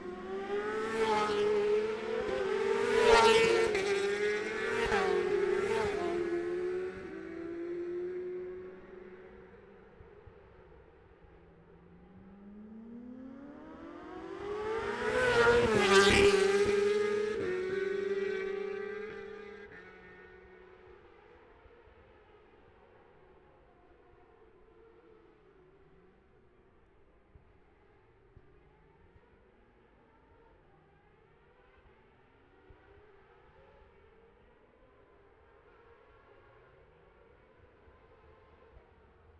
Jacksons Ln, Scarborough, UK - olivers mount road racing 2021 ...
bob smith spring cup ... 600cc Group A qualifying ... luhd pm-01 mics to zoom h5 ...